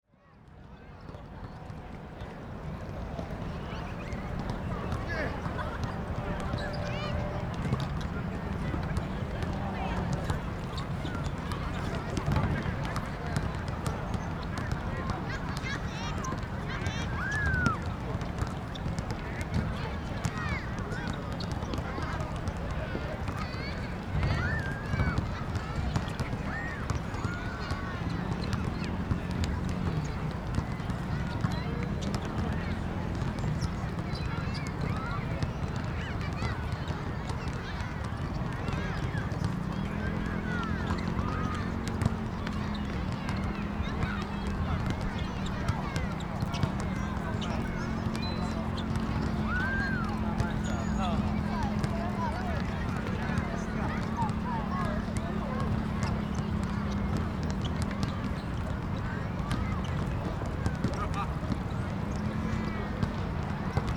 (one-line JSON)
{"title": "New Taipei City, Taiwan - Evening in the park", "date": "2012-02-12 17:08:00", "description": "Dog, kids, basketball, Traffic Noise, Rode NT4+Zoom H4n", "latitude": "25.07", "longitude": "121.47", "altitude": "2", "timezone": "Asia/Taipei"}